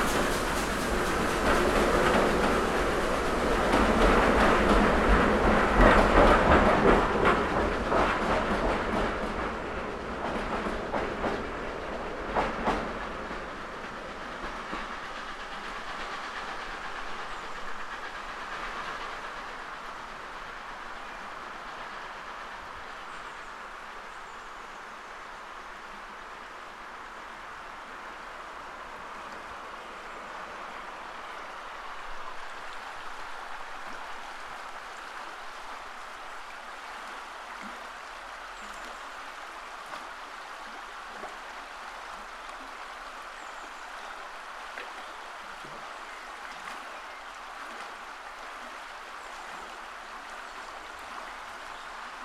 River Dart, Colston Rd, Buckfastleogh, Devon, UK - Landscape01 RiverDart SteamTrain
recorded under the steam railway bridge on the River Dart, Colston Rd, Buckfastleigh